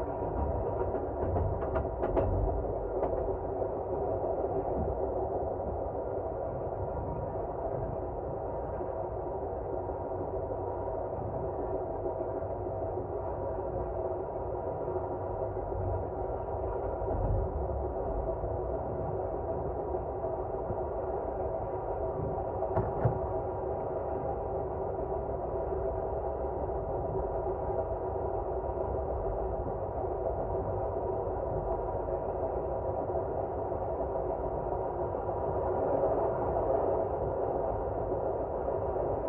Recorded with a pair of JrF contact mics and a Marantz PMD661.
TX, USA, 25 December